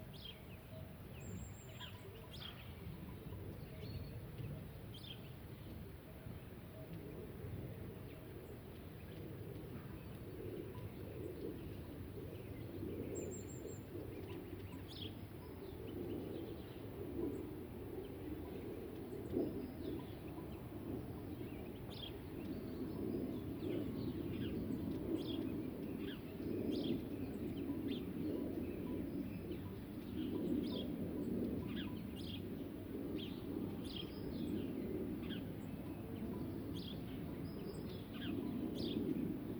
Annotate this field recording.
Freixo de Espada À Cinta, Praia Fluvial, Portugal Mapa Sonoro do Rio Douro Douro River Sound Map